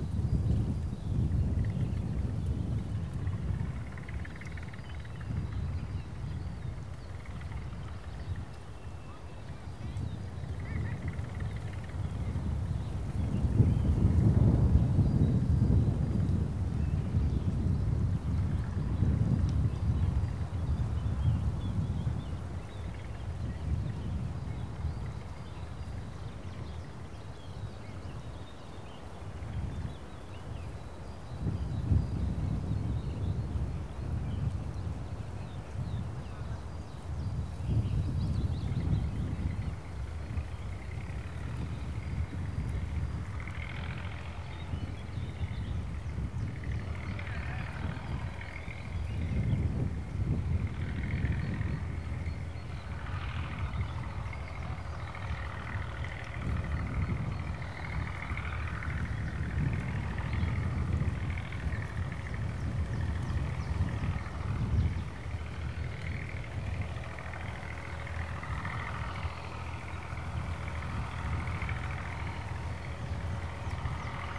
a farming couple on a tractor sowing grains.
województwo wielkopolskie, Polska, European Union, 25 April 2013, 12:07